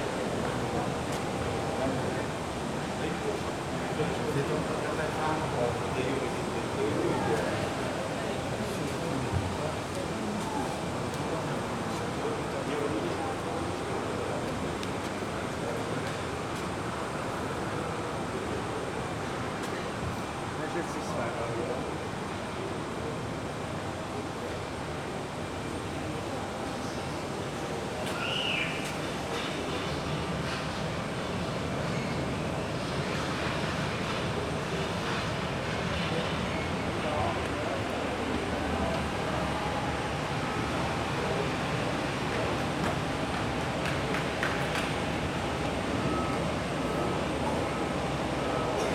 EuroAirport Basel-Mulhouse-Freiburg - observation deck
(binaural) ambience on the observation deck at the airport.